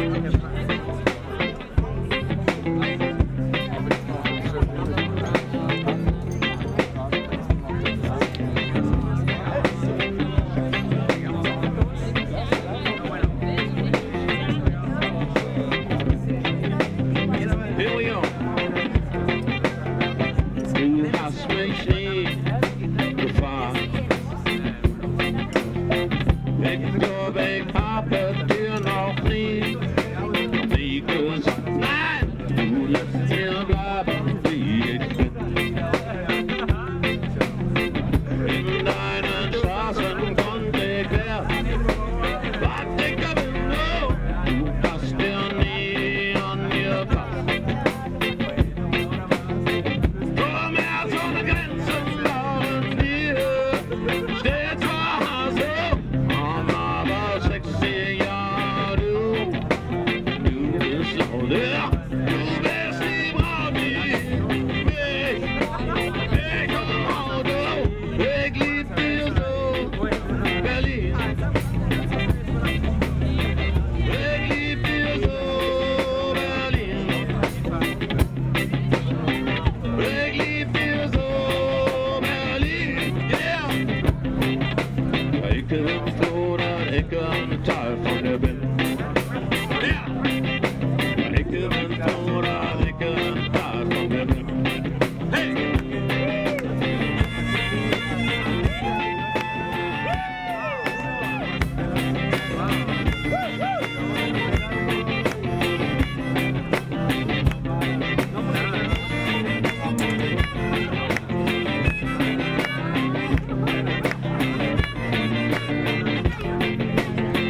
berlin: hobrechtbrücke - the city, the country & me: country & reggae band
country & reggae band during fête de la musique (day of music)
the city, the country & me: june 21, 2012
Berlin, Germany, 21 June 2012, ~22:00